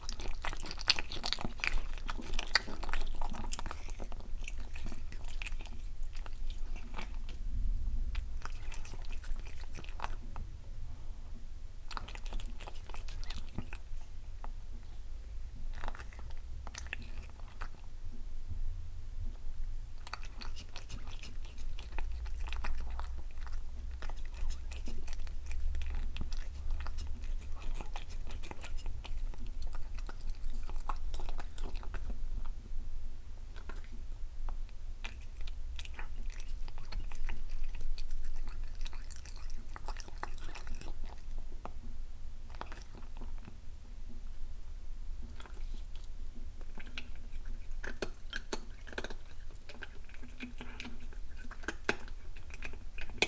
Mont-Saint-Guibert, Belgique - Cats eating
My two cats, eating, early in the morning. This could perhaps be considered as an horror film ;-)
Mont-Saint-Guibert, Belgium